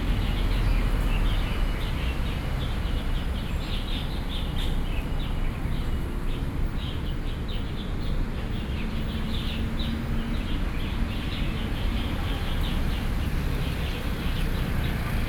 Zhongshan S. Rd., Zhongzheng Dist., Taipei City - Roadside
Roadside, Traffic sounds coming and going, Birds, (Sound and Taiwan -Taiwan SoundMap project/SoundMap20121129-11), Binaural recordings, Sony PCM D50 + Soundman OKM II